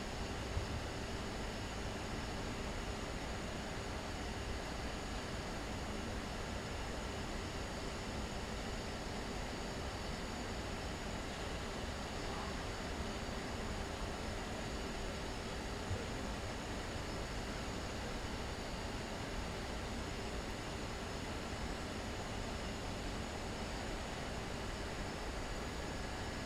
Zamenhofstraat, Amsterdam, Nederland - Wasted Sound Albermale
Wasted Sound of a factory
Noord-Holland, Nederland, 6 November 2019